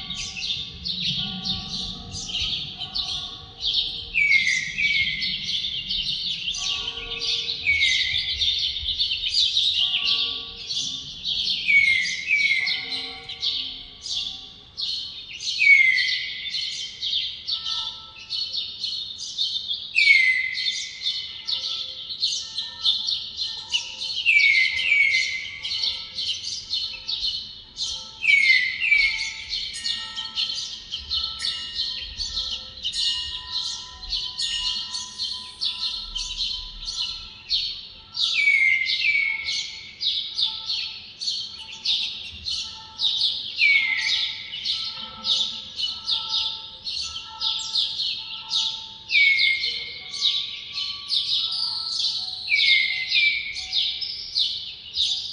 Convent of Santa Catalina de Siena, Oaxaca, Oax., Mexico - Dawn Birds After a Wedding
Recorded with a pair of DPA4060s and a Marantz PMD660
10 April 2016